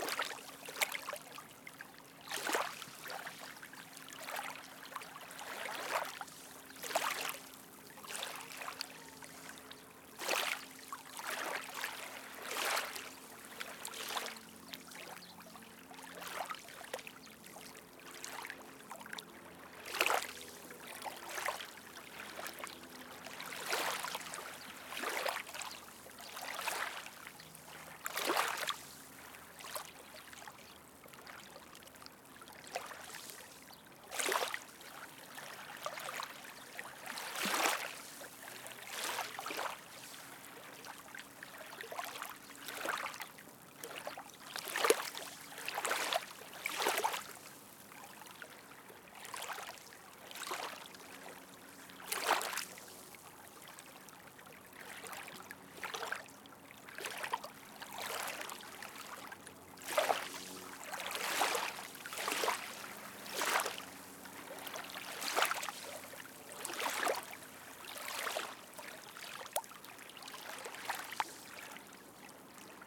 Close miking of waves gently crashing onto the shore, near where rio Tracão meets rio Tejo (between Oriente and Sacavém, Lisboa). Recorded with a zoom H5 internal mics (XY stereo 90º).
24 May, ~18:00